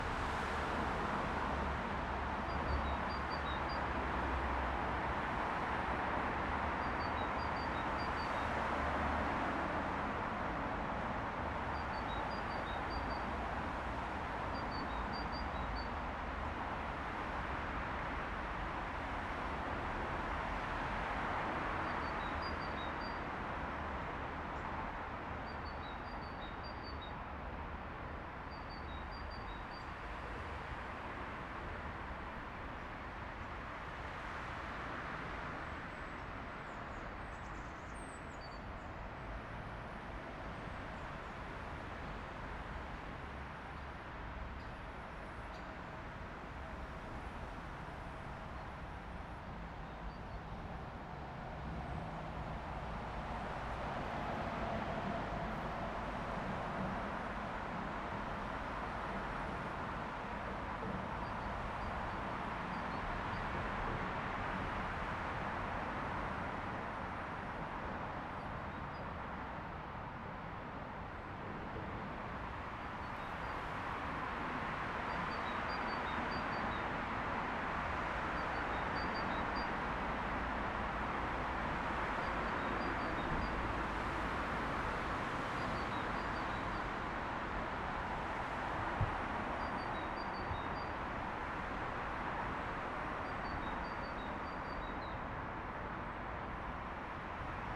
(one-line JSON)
{"title": "IJ-tunnel, Amsterdam, Nederland - Wasted Sound IJ-tunnel", "date": "2019-12-04 12:39:00", "description": "Wasted Material\n‘‘In our mind it simply still has to be useful and this is where creativity comes in. The need to create gets filled with this big pile of material with potential, if only it could be figured out what ....... The big collection of materials that ones where useful and now became useless triggers our creativity. Driven by fear of messing up the ecological system. Personally I see waste as the base where creativity can grow on.’’", "latitude": "52.38", "longitude": "4.91", "altitude": "2", "timezone": "Europe/Amsterdam"}